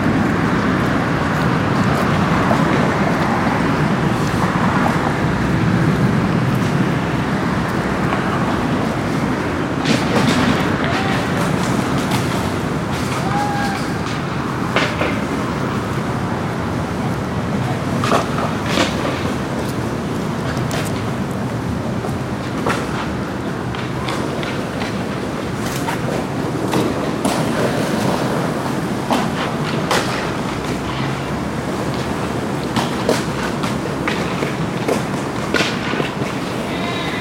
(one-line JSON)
{"title": "Northwest Washington, Washington, DC, USA - Weekend skateboarding at the Freedom Plaza", "date": "2016-10-18 10:00:00", "description": "Recording at Freedom Plaza, Washington, District of Columbia on a Sunday afternoon. There were about 20-30 skateboarders at this time and there is some light automobile traffic featured on this recording.", "latitude": "38.90", "longitude": "-77.03", "altitude": "21", "timezone": "America/New_York"}